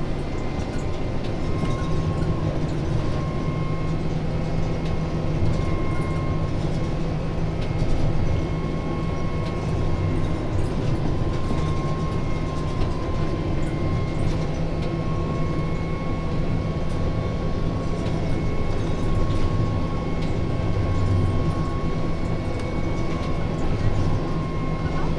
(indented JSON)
{
  "title": "l'viv, trolleybus ride - line 10 from sykhiv to the university (part III)",
  "date": "2009-08-25 09:28:00",
  "latitude": "49.84",
  "longitude": "24.02",
  "altitude": "282",
  "timezone": "Europe/Kiev"
}